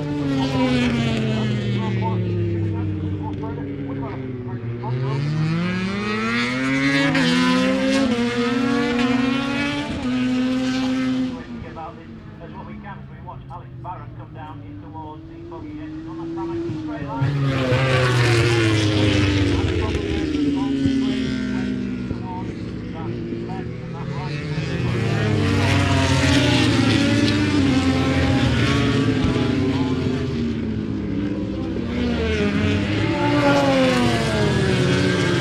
Derby, UK - british motorcycle grand prix 2007 ... motogp free practice 3 ...
british motorcycle grand prix 2007 ... motogp free practice 3 ... one point stereo mic ... audio technica ... to minidisk ... time approx ...